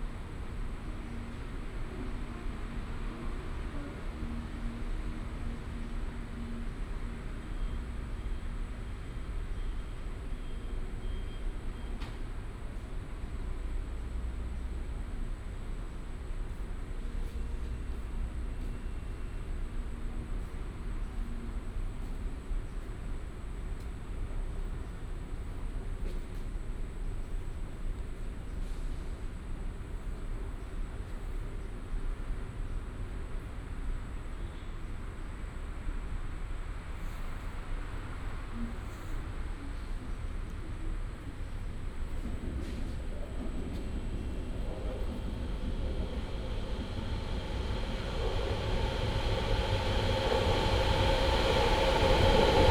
Taoyuan HSR Station, Zhongli District, Taoyuan City - at the station platform
At the station platform, MRT train passing by
Binaural recordings, Sony PCM D100+ Soundman OKM II
Zhongli District, 高鐵北路一段, 28 February 2018